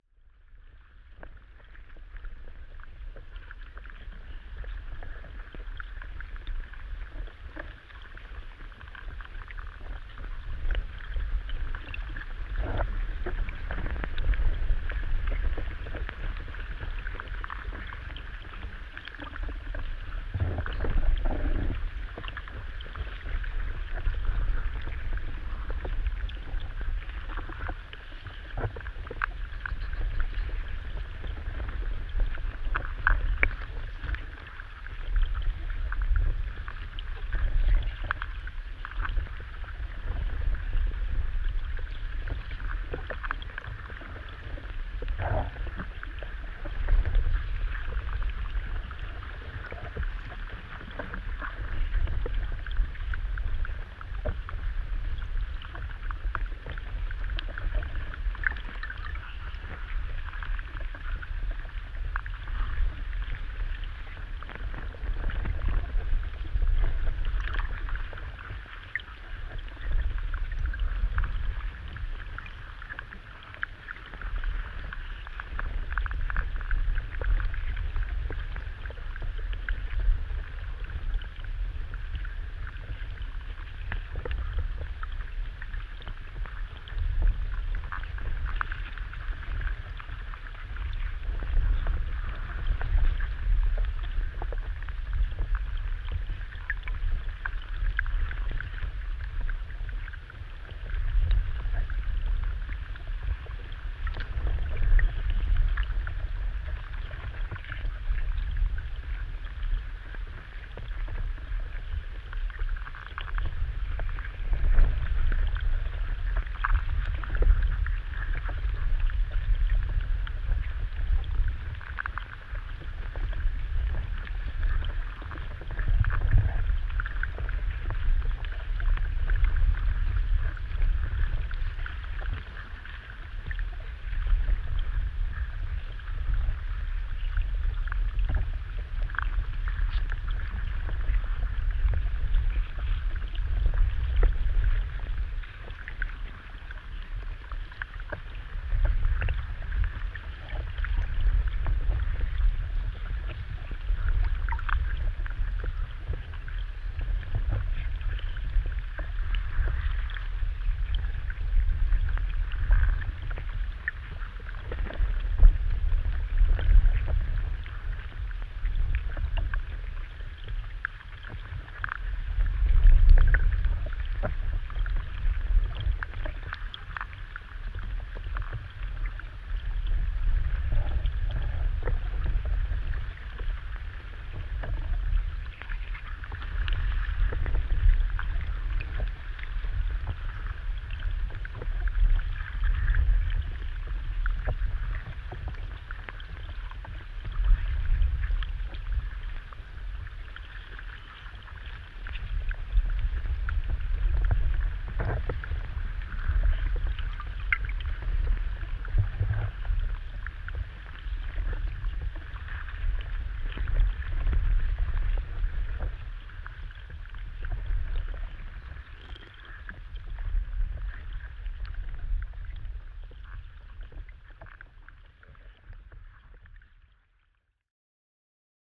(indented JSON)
{
  "title": "Vyzuonos, Lithuania, river Sventoji underwater",
  "date": "2020-10-25 15:30:00",
  "description": "Hydrophonein the river, near the fallen branch",
  "latitude": "55.61",
  "longitude": "25.49",
  "altitude": "94",
  "timezone": "Europe/Vilnius"
}